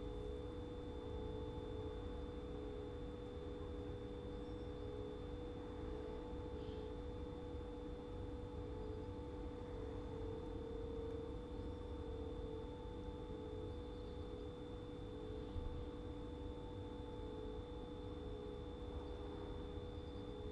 Berlin Wall of Sound, Factory Oderstr-Teltow 080909
Teltow, Germany